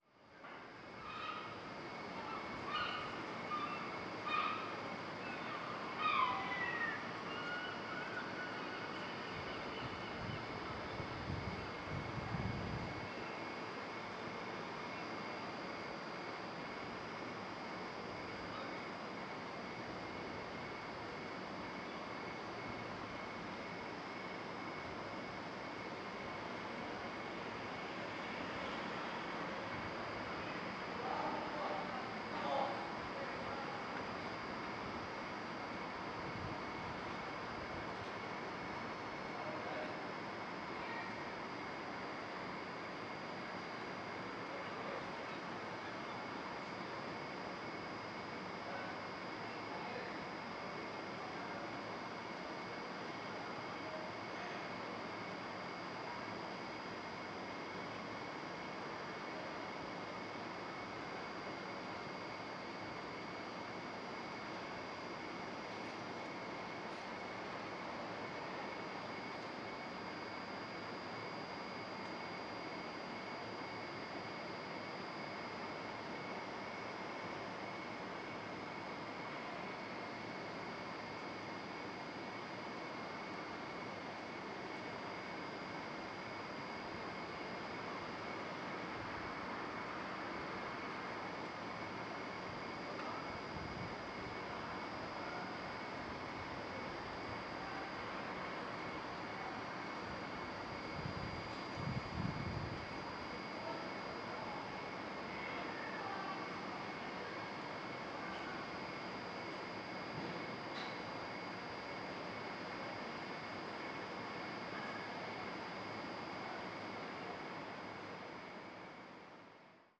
Joys Entry, Belfast, UK - The Entries
Recording of a nearby generator producing a constant electrical hum, birds flying above, and voices resonating within the alleyway.
27 March 2021, ~17:00